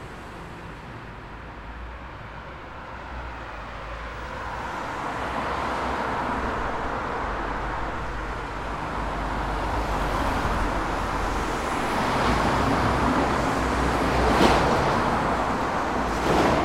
Lemsdorfer Weg, Magdeburg, Germany - Traffic in highway underpass
Recorded with a Tascam DR-07, cold weather, rush hour traffic.
Sachsen-Anhalt, Deutschland, 3 December, 15:30